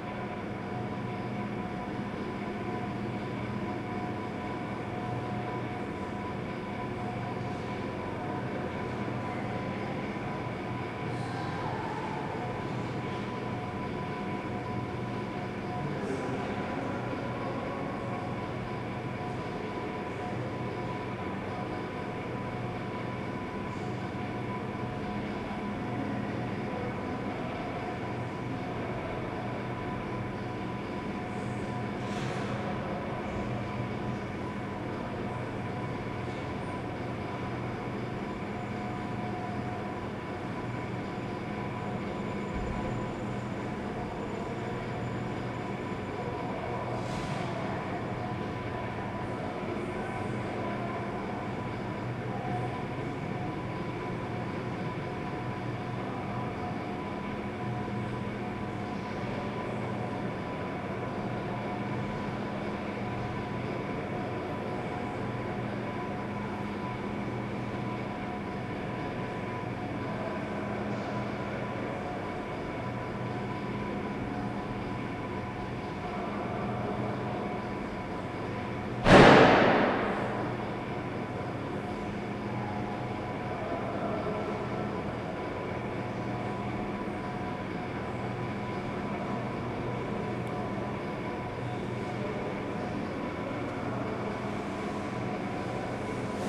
gimnazija, Maribor, Slovenia - atrium resonance
an ancient vending machine resonates in the 2nd floor atrium of the highschool, while distant voices can be heard from elsewhere in the building